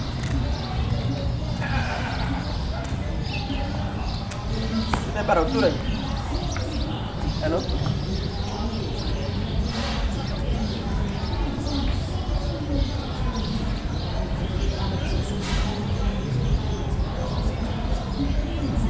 Pernambuco, República Federativa do Brasil - paisagem Escola junto aPraça
h4n 120/120